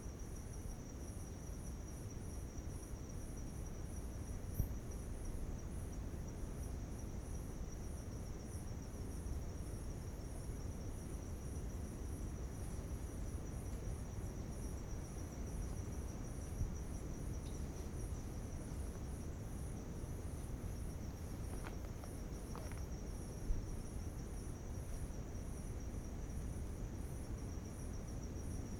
Lagoinha do Leste, Florianópolis, Santa Catarina, Brazil - Camping Night Sound from Lagoinha do Leste beach
Right before a stormy night comes, I recorded this sound while layed down to sleep, it was calm and quiet.
recorded with a ZoomH1
Santa Catarina, Região Sul, Brasil, 4 April 2021